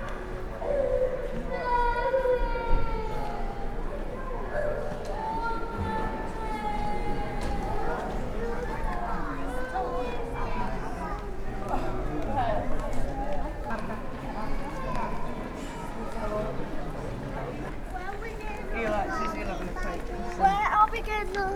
Gloucester Quays Shopping Centre, Gloucester, UK - Real Time Walk in a Shopping Centre.
A real time wander through the cavernous interior of a modern covered shopping centre. This place is never really busy and individual sounds are easily recognised and the ambient sounds change rapidly. Recoded with a MixPre 3 and 2 x Bayer Lavaliers
South West England, England, United Kingdom